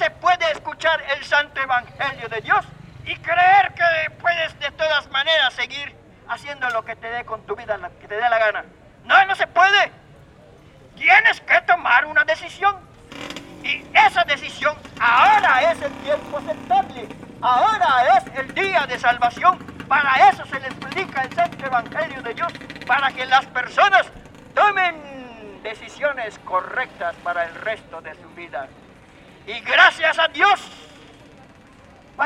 por calle 65 y, C., Centro, Mérida, Yuc., Mexique - Merida - le prédicateur
Merida - Mexique
Le prédicateur